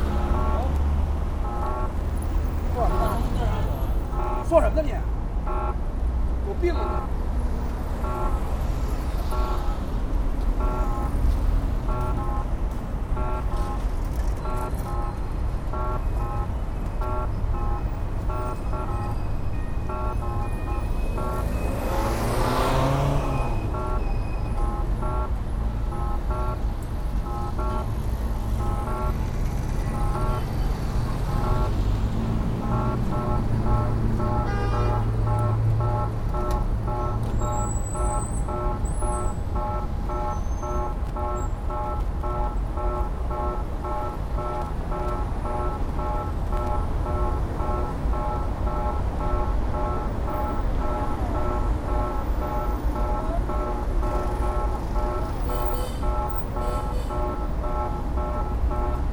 beijing, centre, traffic light sounds
beijing cityscape - traffic lights in the evening
soundmap international
project: social ambiences/ listen to the people - in & outdoor nearfield recordings
China, City centre, April 2008